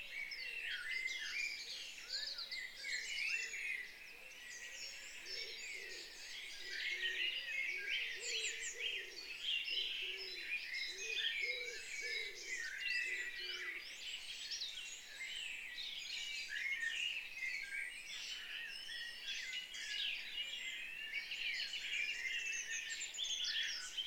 Crossroads, The Common, Cranleigh, UK - morning birdsong mid/side
Early morning birdsong in Cranleigh Surrey. Rode NT2 fig 8 Side and Rode NT1 mid recorded to macbook